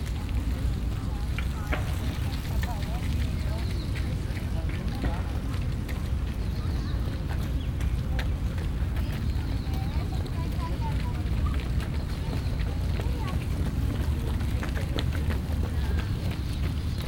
Sao Paulo, parque Ibirapuera, sunday afternoon
São Paulo, Brazil